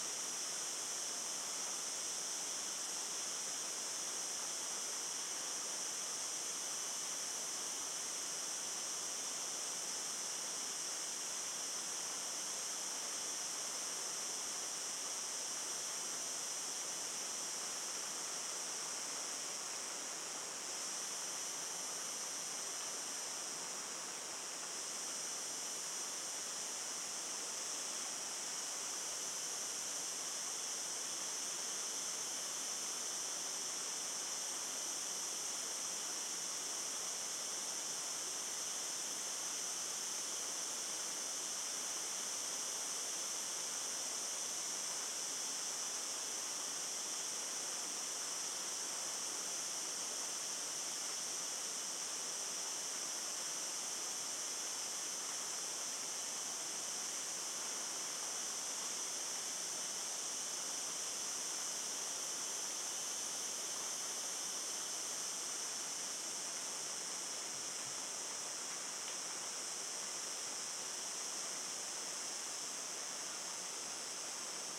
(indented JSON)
{
  "title": "Bolulla, Espagne - Bolulla - Espagne - Font dels Xoros - Cigales",
  "date": "2022-07-12 16:30:00",
  "description": "Bolulla - Espagne\nFont dels Xoros\nCigales\nZOOM F3 + AKG C 451B",
  "latitude": "38.68",
  "longitude": "-0.11",
  "altitude": "235",
  "timezone": "Europe/Madrid"
}